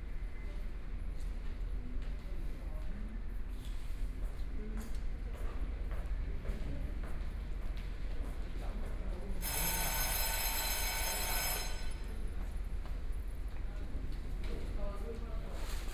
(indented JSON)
{
  "title": "Hualien Station, Taiwan - In the station lobby",
  "date": "2014-01-15 11:30:00",
  "description": "Dialogue among high school students, Traffic Sound, Mobile voice, Binaural recordings, Zoom H4n+ Soundman OKM II",
  "latitude": "23.99",
  "longitude": "121.60",
  "timezone": "Asia/Taipei"
}